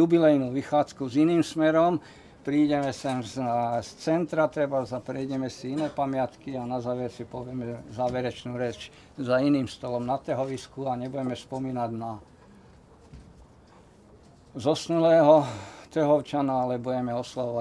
Trhovisko Zilinska
Unedited recording of a talk about local neighbourhood.
13 June 2014, ~21:00